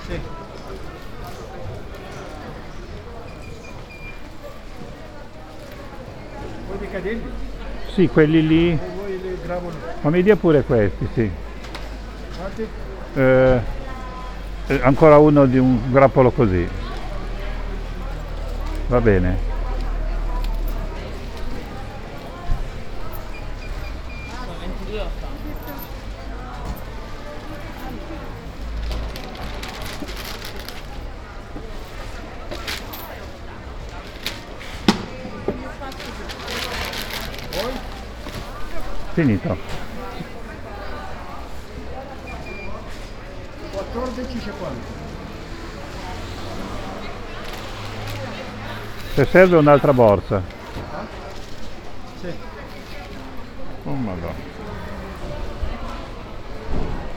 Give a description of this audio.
“Outdoor market in the square at the time of covid19” Soundwalk, Chapter LIV of Ascolto il tuo cuore, città. I listen to your heart, city. Thursday April 23rd 2020. Shopping in the open air square market at Piazza Madama Cristina, district of San Salvario, Turin, fifty four days after emergency disposition due to the epidemic of COVID19. Start at 11:27 a.m., end at h. 11:59 a.m. duration of recording 22’10”, The entire path is associated with a synchronized GPS track recorded in the (kml, gpx, kmz) files downloadable here: